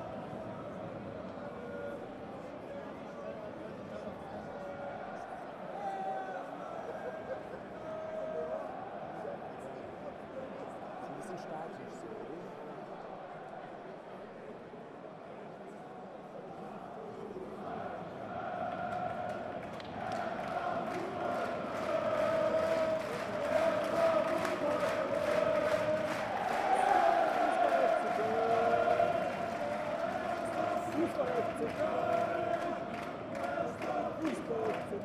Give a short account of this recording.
Goal for Cologna in the match against Bochum